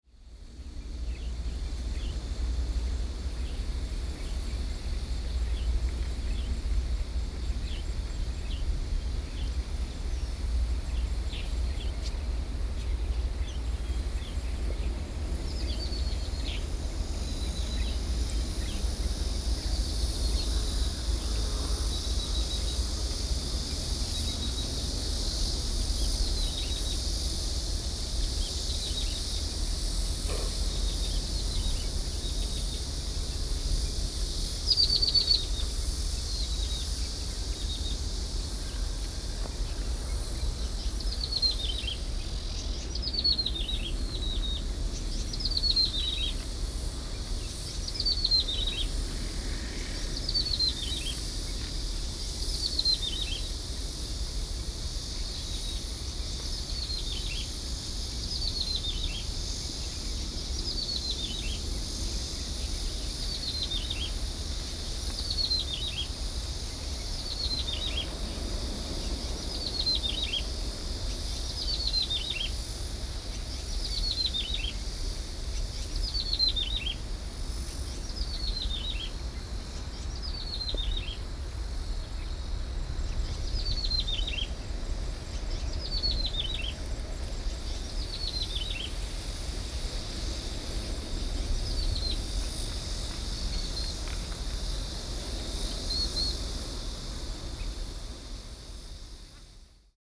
磺港里, Jinshan District, New Taipei City - Standing on the bank
Standing on the bank, Bird calls, There are distant sound of the waves
Zoom H4n+Rode NT4(soundmap 20120711-21)
Jinshan District, New Taipei City, Taiwan, 11 July 2012, 07:46